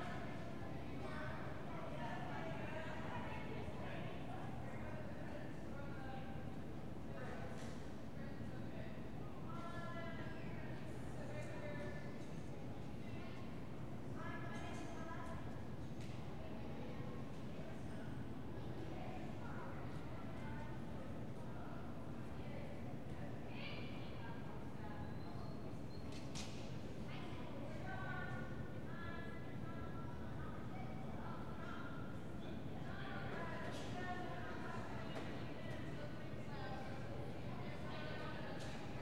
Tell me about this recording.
A popular community pool is packed on the first sunny day of spring vacation. Major elements: * Kids yelling, running, playing, splashing, jumping in, * Lifeguards trying to keep order, * Diving board, * Water basketball game, * Parents in the water & on deck, * The whoosh of the air circulation system